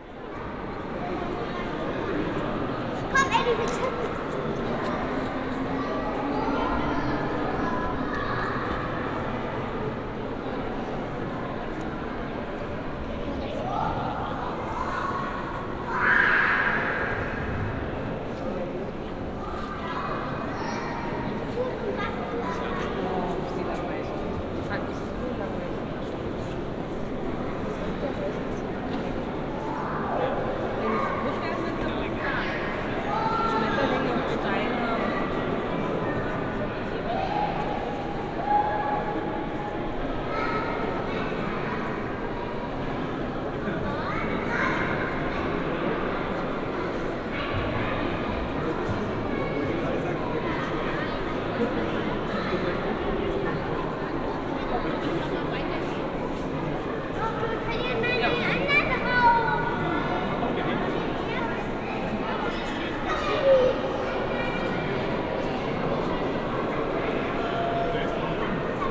Berlin, Museum für Naturkunde - big hall, ambience
ambience in the big hall of the Museum für Naturkunde. huge skeletons of dinosaurs all around, lots of visitors on this Saturday afternoon
(Sony PCM D50, EM172 binaural)
Berlin, Germany